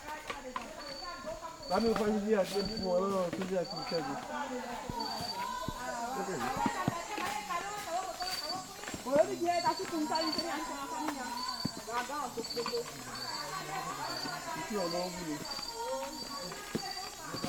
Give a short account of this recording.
maisakriki - women walking to their fields. They are educated in better ways to grow crops and save the forest (instead of slash and burn)